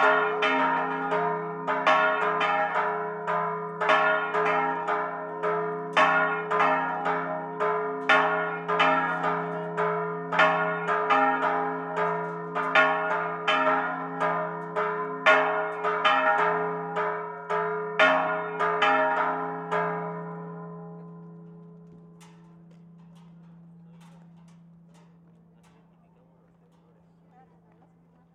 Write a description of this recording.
Cholula, Santuario de la Virgen de los remedios, cloches en volée manuelle.